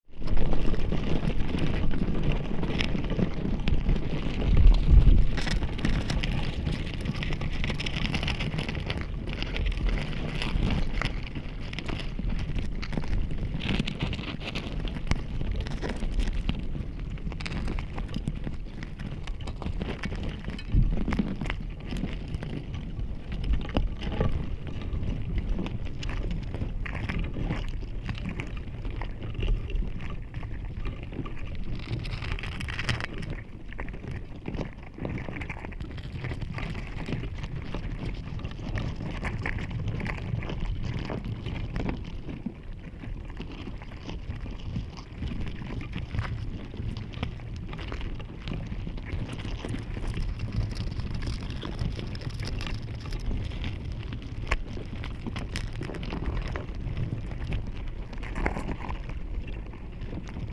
Wyspa Sobieszewska, Gdańsk, Poland - Mrowisko
Mrowisko / Stołówka Drogowiec - mikrofony kontaktowe.
15 August 2015, ~15:00